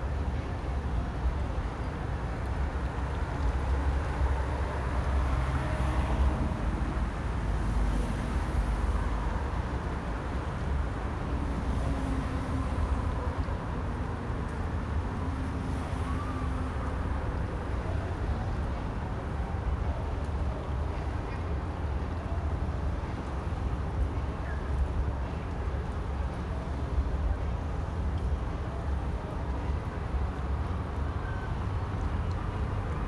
대한민국 서울특별시 서초구 올림픽대로 683 잠수교 - Han river, Jamsu Bridge
Han river, Jamsu Bridge, Bus Stop, Cars passing by
한강 잠수교, 버스정류장, 낮은 물소리, 버스